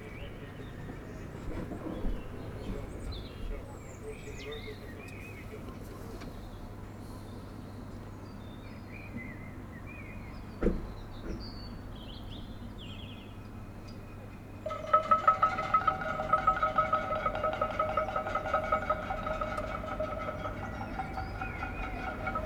Mapesbury Rd, London, UK - Clapping for NHS
recording clapping for NHS from my window
23 April 2020, 19:59